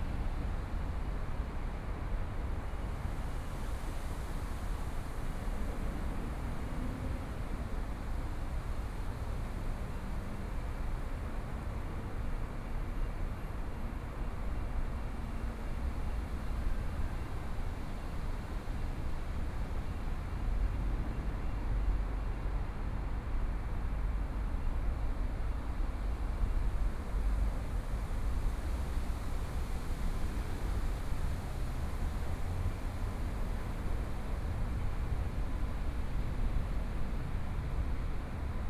Birds singing, Traffic Sound, Aircraft flying through